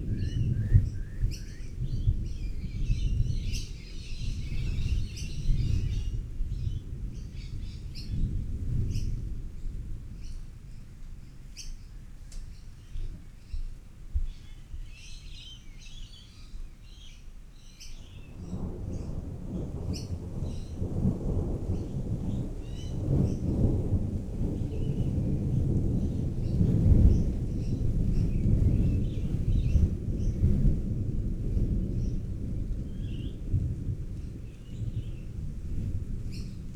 Coomba Park NSW, Australia - Storm Brewing Birds
A storm brewing with bird sounds in Coomba Park, NSW, Australia.